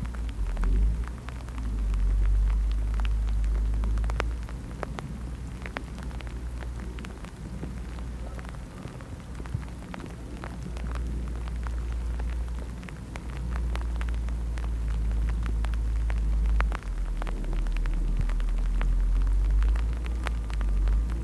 Weißwasser, Germany - Distant mine work in the hardening rain
Rainy grey misty weather at dusk. But during this recording a small touch of magic – the sun suddenly appeared as small disc of misty orange in the gloom. Strangely uplifting and very atmospheric in the spattering rain.
Weißwasser/Oberlausitz, Germany, 18 October, 17:47